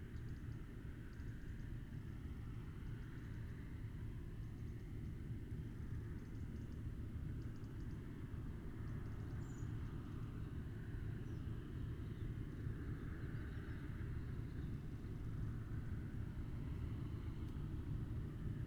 Green Ln, Malton, UK - muck spreading ...
muck spreading ... two tractors at work ... dpa 4060s in parabolic to MixPre3 ... bird calls ... red-legged partridge ... pheasant ... meadow pipit ... crow ...
5 October 2010, ~08:00